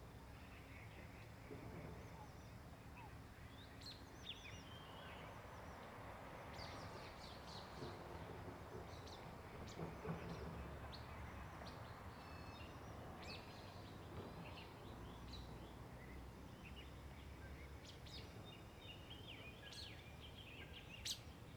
Wetlands, Bird sounds
Zoom H2n MS+XY
Nantou County, Taiwan